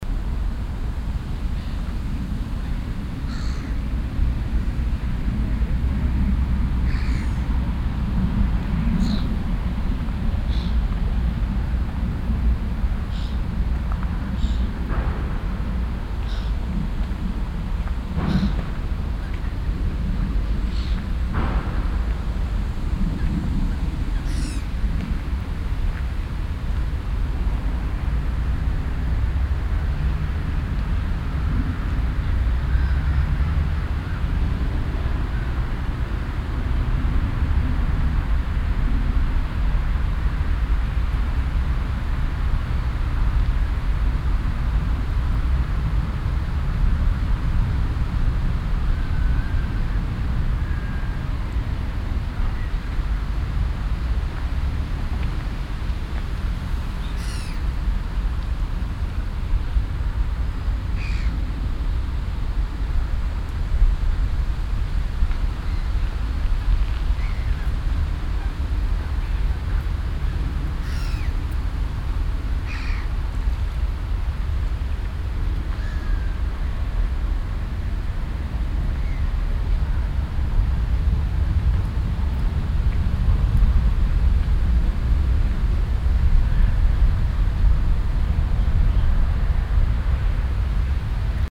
Düsseldorf, Hofgarten, am See unter Weide - Düsseldorf, Hofgarten, am See unter Weide
Mittags am Hofgarten See unter einer Weide, leichte Windbrisen, das Geschnatter des Seegefieders & das ständige Dröhnen der Verkehrszonen.
soundmap nrw: social ambiences/ listen to the people - in & outdoor nearfield recordings
21 August 2008